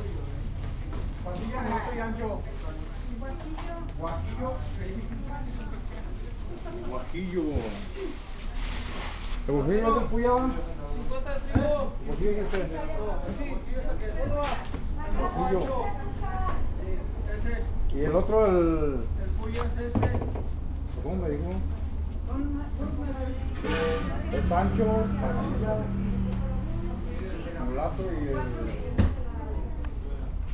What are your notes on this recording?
Preventing myself with bird food at the beginning of the COVID-19 quarantine. It seems that several people were doing their shopping so that they no longer had to leave during the quarantine. This is in Comercializadora Los Laureles SAN JOAQUIN Cereales, Granos Y Especias. I made this recording on March 21st, 2020, at 12:27 p.m. I used a Tascam DR-05X with its built-in microphones and a Tascam WS-11 windshield. Original Recording: Type: Stereo, Parece que varias personas estaban haciendo sus compras para ya no tener que salir durante la cuarentena. Esto es en Comercializadora Los Laureles SAN JOAQUÍN Cereales, Granos Y Especias. Esta grabación la hice el 21 de marzo 2020 a las 12:27 horas.